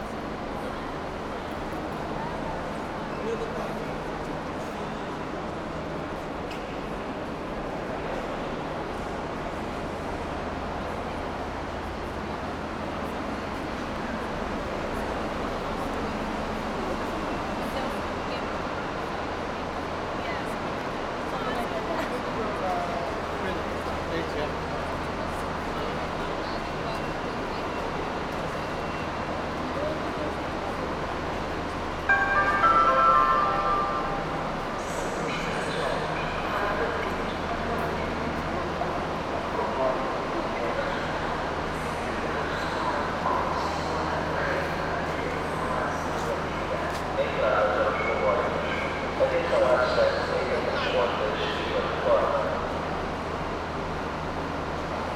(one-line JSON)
{"title": "Porto, São Bento Train Station, main hall - diffusion", "date": "2013-10-02 13:26:00", "description": "moving from the main hall towards the platforms. the place is packed with locals and tourists. all sounds heavily reverberated over high ceilings. diffused tails. going among the trains.", "latitude": "41.15", "longitude": "-8.61", "altitude": "64", "timezone": "Europe/Lisbon"}